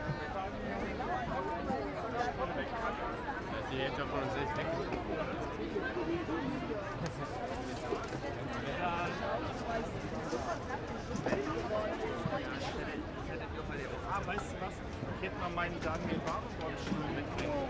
Thaipark, Wilmersdorf, Berlin - people gathering for picknick
Preussenpark / Thaipark, crowd of people gathring on a Sunday afternoon for a picknick, servrd by many sellers of asian food with improvised kitchens
(Sony PCM D50, Primo EM172)
Berlin, Germany, 21 May 2017